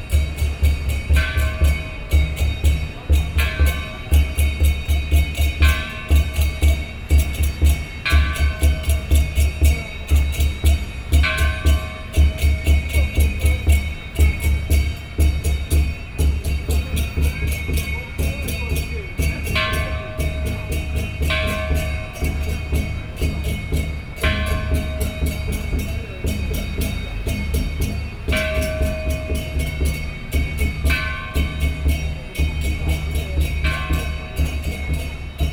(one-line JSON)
{"title": "Chongqing S. Rd., Taipei City - Parade", "date": "2014-02-28 15:09:00", "description": "Parade, Pedestrian, Traffic Sound, In the corner of the street\nPlease turn up the volume a little\nBinaural recordings, Sony PCM D100 + Soundman OKM II", "latitude": "25.05", "longitude": "121.51", "timezone": "Asia/Taipei"}